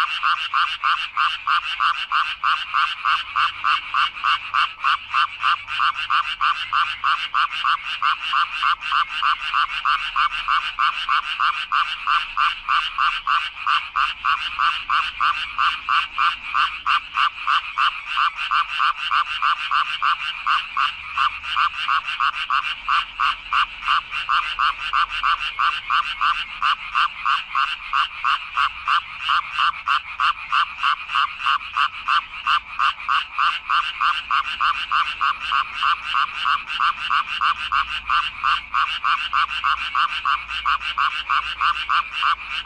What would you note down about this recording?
frogs in a drain rockin' Chuncheon at the midnight hour